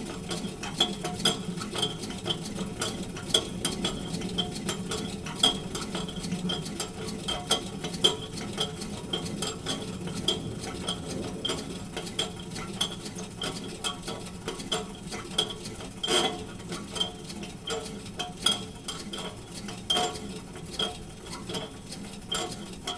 Lithuania, Utena, inside the ventilation tube
windy day outside...strange sounds inside the ventilation tube
8 February, 11:10